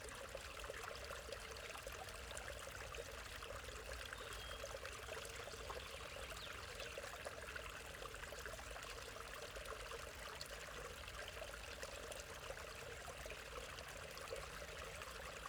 Bubbling waterfall, small stream, Údolní, Praha, Czechia - Bubbling waterfall, small hillside stream
This small stream runs from the top of hill near here to the river Vlatava. Through Branik town it flows out of sight or hearing in pipes underground. But here it still tumbles down the steep hillside. In two places it slows to form dark ponds amongst the trees where sometimes visited by a couple of mallard ducks. This waterfall is just above the lower pond and makes a nice bubbly contrast to the constant traffic noise of the area.
Praha, Česko, 6 April